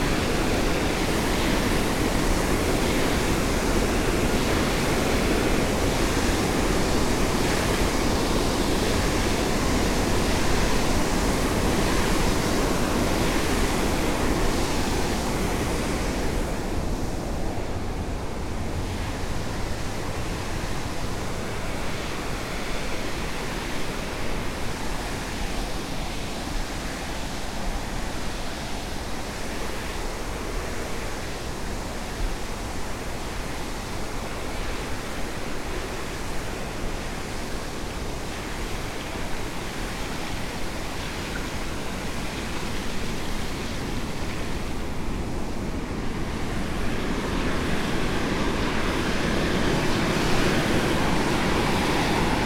Poses, France - Poses dam
A walk threw the Poses dam, with powerful Seine river flowing.